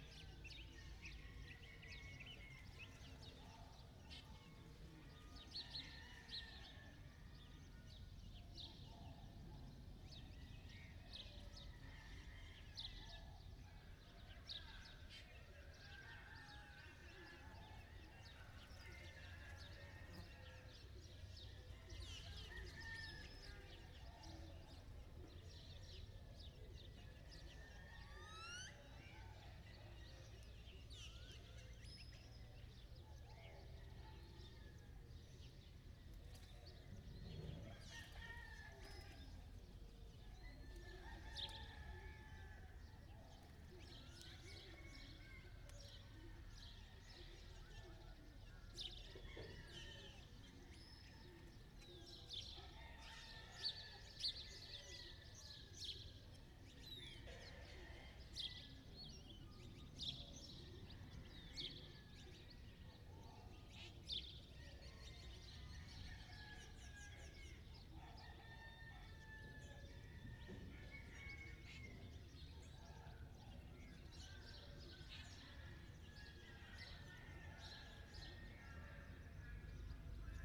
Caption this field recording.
Central square of the small village of Wadley in the desert. During Morning 10am. Recorded by an ORTF setup Schoeps CCM4 on a Sound Devices MixPre6. Sound Ref: MX-190607-001